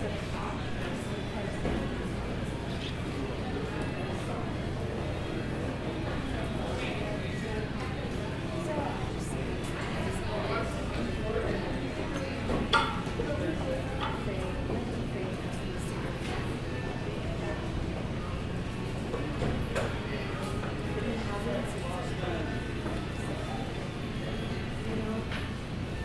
University of Colorado Boulder, University Memorial Center - Morning Daze
CO, USA, 4 February 2013